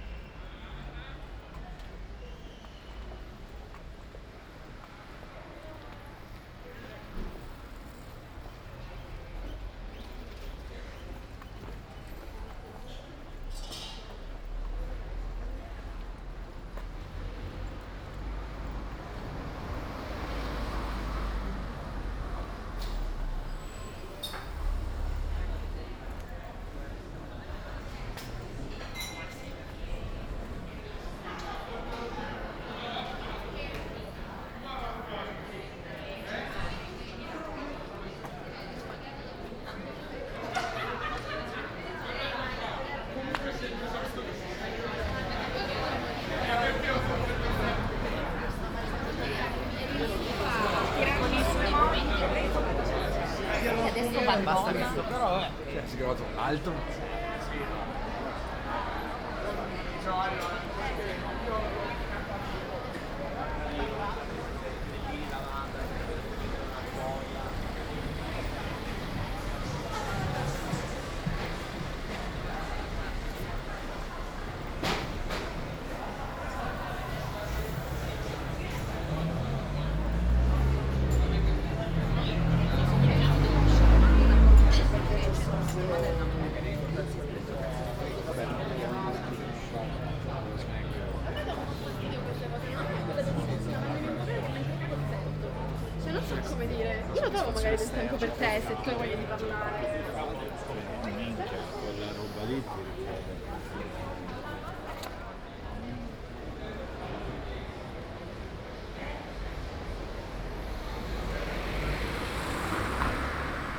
“La flânerie III après trois mois aux temps du COVID19”: Soundwalk
Chapter CV of Ascolto il tuo cuore, città. I listen to your heart, city
Friday, June 12th 2020. Walking in the movida district of San Salvario, Turin ninety-four days after (but day forty of Phase II and day twenty-seven of Phase IIB and day twenty-one of Phase IIC) of emergency disposition due to the epidemic of COVID19.
Start at 7:30 p.m., end at h. 8:10 p.m. duration of recording 39'46''
As binaural recording is suggested headphones listening.
The entire path is associated with a synchronized GPS track recorded in the (kml, gpx, kmz) files downloadable here:
This soundwalk follows in similar steps as two days ago, June 10, and about three months earlier, Tuesday, March 10, the first soundtrack of this series of recordings.
Piemonte, Italia